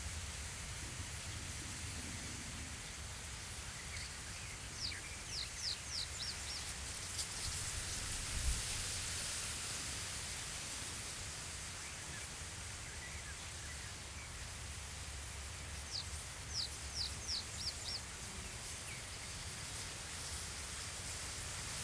Neuküstrinchen, Deutschland - river Oder bank, reed
at the river Oder, german / polish border, wind in reed
(Sony PCM D50, DPA4060)
Oderaue, Germany